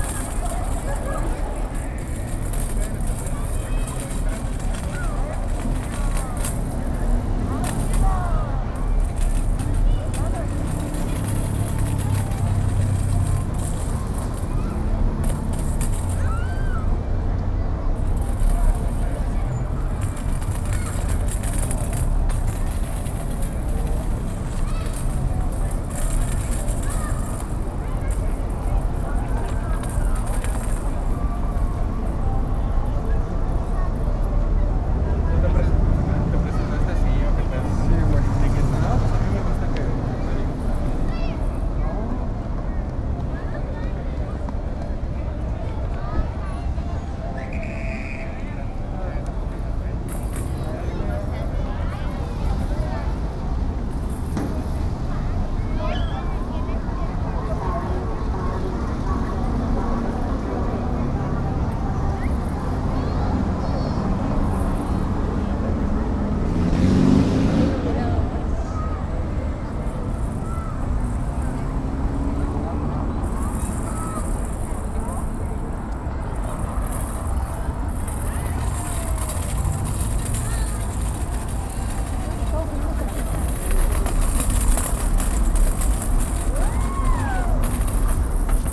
Ignacio Zaragoza, Centro, León, Gto., Mexico - Plaza Expiatorio aún durante la pandemia de COVID-19.
Plaza Expiatorio during the COVID-19 pandemic still.
Now with more people because 41.43% of the country is fully vaccinated to this date.
You can hear the toy of a child playing nearby, people coming and going, cars passing, a traffic officer, among other things.
I made this recording on October 23rd, 2021, at 8:34 p.m.
I used a Tascam DR-05X with its built-in microphones.
Original Recording:
Type: Stereo
Plaza Expiatorio aún durante la pandemia de COVID-19.
Ahora ya con más gente debido a que el 41,43% del país está completamente vacunada a esta fecha.
Se escucha el juguete de un niño jugando en la cercanía, gente que va y viene, carros pasando, un oficial de tránsito, entre varias cosas más.
Esta grabación la hice el 23 de octubre de 2021 a las 20:34 horas.
Usé un Tascam DR-05X con sus micrófonos incorporados.